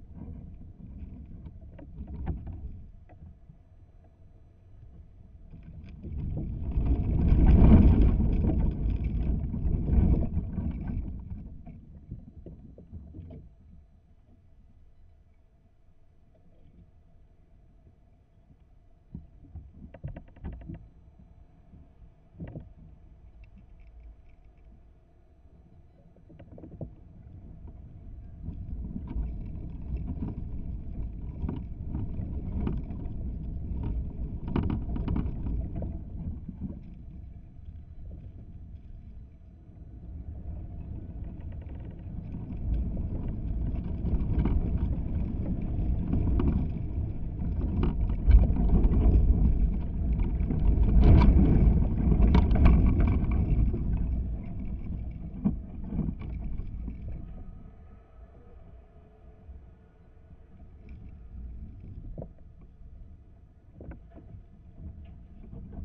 Utena, Lithuania, abandoned house
contact mics on the roof of abandoned house
11 April 2018